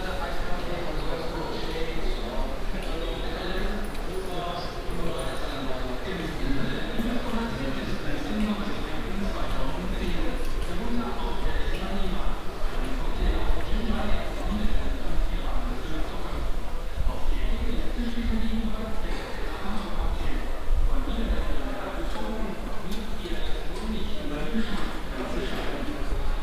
on screen advertisments inside a big store for construction material
soundmap nrw - social ambiences and topographic field recordings